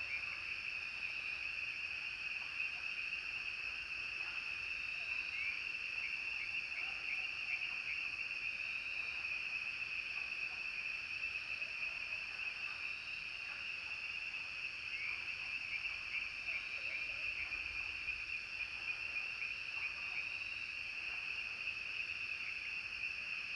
蓮華池藥用植物標本園, 魚池鄉五城村 - Frog sounds
Frog sounds, Ecological pool
Zoom H2n MS+XY